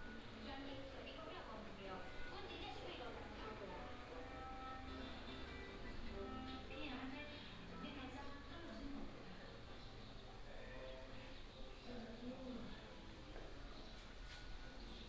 北埔慈天宮, Beipu Township - In the temple
In the temple, bird sound, Binaural recordings, Sony PCM D100+ Soundman OKM II
Hsinchu County, Taiwan, 30 August 2017, 08:46